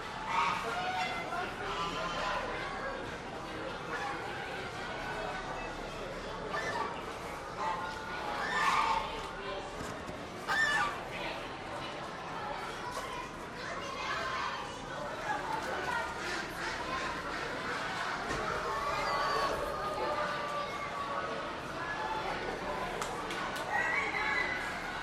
Kansas State Fairgrounds, E 20th Ave, Hutchinson, KS, USA - Northwest Corner, Poultry Building
An Old English Game fowl (black breasted) talks. Other poultry are heard in the background. Stereo mics (Audiotalaia-Primo ECM 172), recorded via Olympus LS-10.
9 September 2017